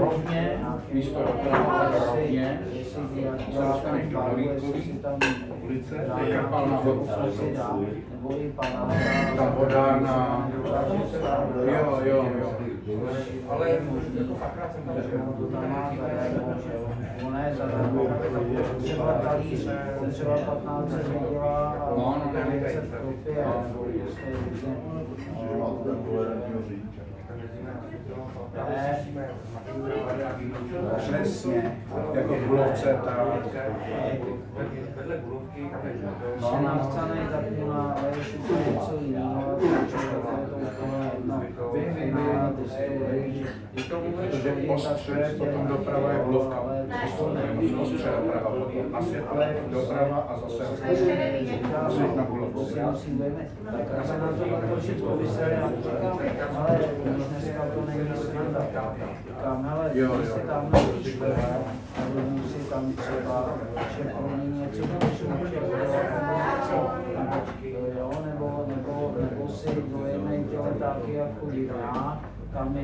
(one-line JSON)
{"title": "Braník station cafe, Pikovická, Praha, Czechia - Braník station cafe", "date": "2022-04-06 10:30:00", "description": "This cafe is one of the few station cafes in Prague still operating. it is very popular with local workers for lunch. We were just drinking coffee, but the food looked pretty good.", "latitude": "50.03", "longitude": "14.41", "altitude": "195", "timezone": "Europe/Prague"}